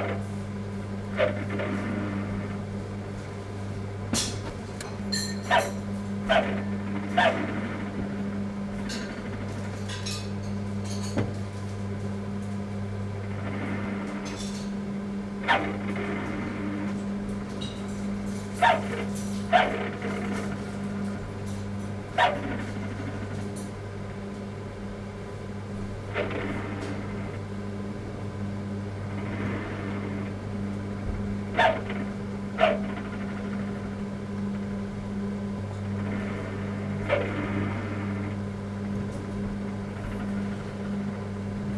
World Listen Today My Kitchen No Longer Tomorrow
wld, world listening day